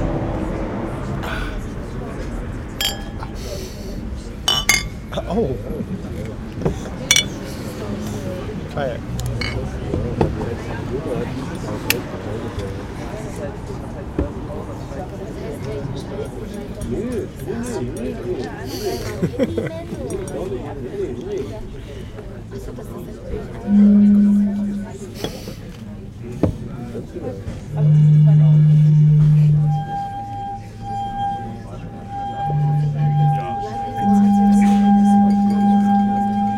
{
  "title": "leipzig, im NochBesserLeben",
  "date": "2011-08-31 21:15:00",
  "description": "im außencafé des NochBesserLeben in der merseburger straße ecke karl-heine-straße. straßenverkehr, stimmen der gäste. einige reagieren dann aufs mikrophon und machen absichtliche geräusche.",
  "latitude": "51.33",
  "longitude": "12.33",
  "altitude": "119",
  "timezone": "Europe/Berlin"
}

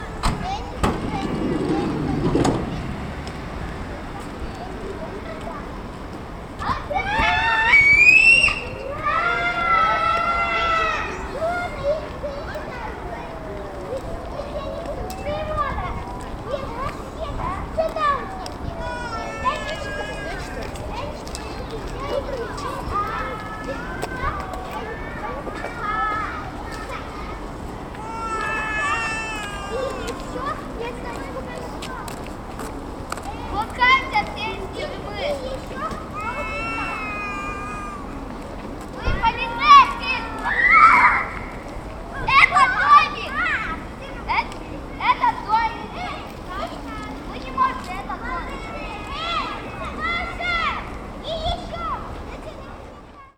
{
  "title": "kindergarten, Lasnamae, Tallinn",
  "date": "2011-04-20 18:00:00",
  "description": "kindergarten, evening, three kids play waiting for parents",
  "latitude": "59.44",
  "longitude": "24.88",
  "altitude": "46",
  "timezone": "Europe/Tallinn"
}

